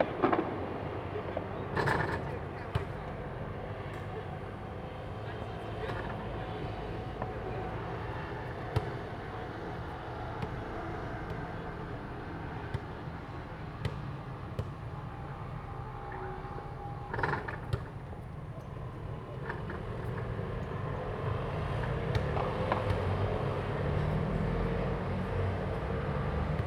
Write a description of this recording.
Firecrackers and fireworks, basketball, Traffic sound, lunar New Year, Zoom H2n MS+XY